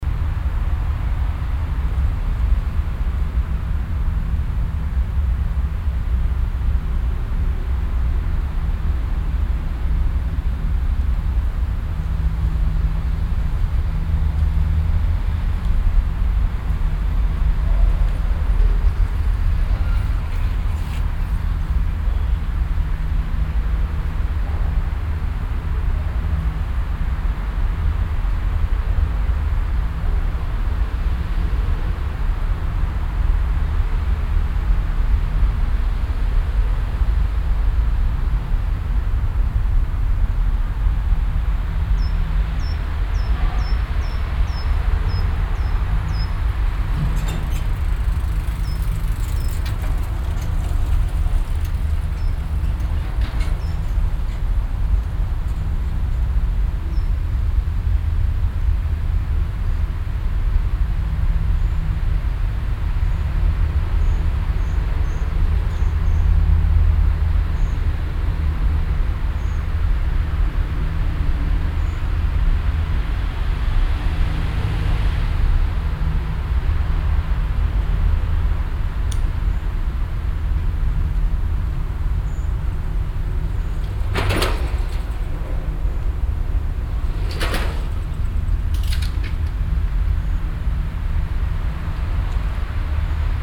Düsseldorf, Hofgarten, Weyhe Passage
Mittags im parkverbindenen Fussgängertunnel, Schritte und durchrollende Fahrradfahrer. Darüber das Rauschen des Strassenverkehrs.
soundmap nrw: social ambiences/ listen to the people - in & outdoor nearfield recordings
21 August 2008